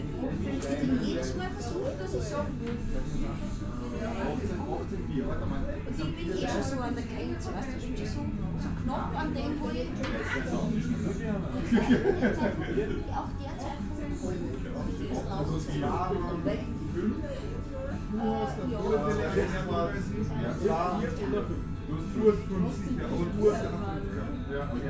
Linz, Österreich - granit linzer bierstube
granit linzer bierstube, pfarrgasse 12
Linz, Austria, January 2015